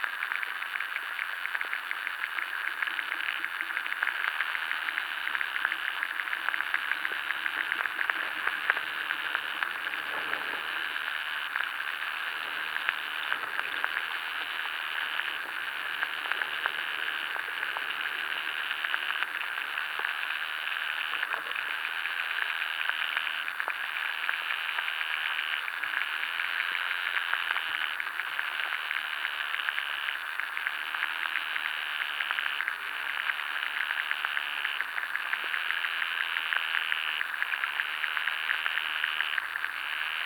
underwater life in river Lielupe
Vidzeme, Latvija, 21 July